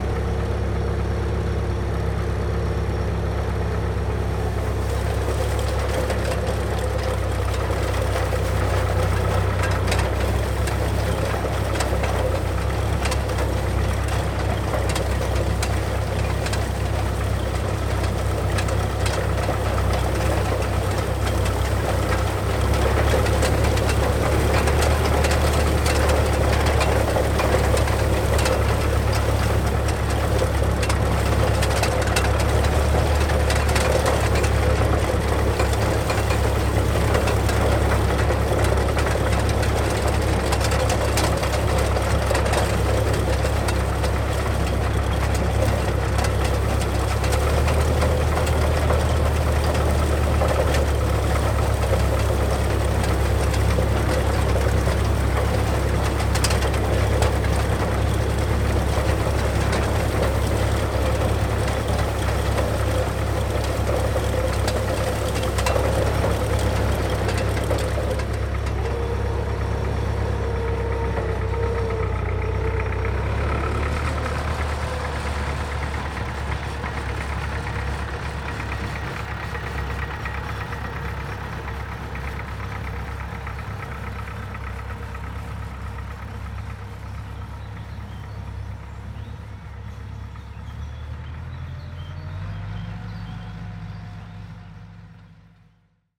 [Hi-MD-recorder Sony MZ-NH900, Beyerdynamic MCE 82]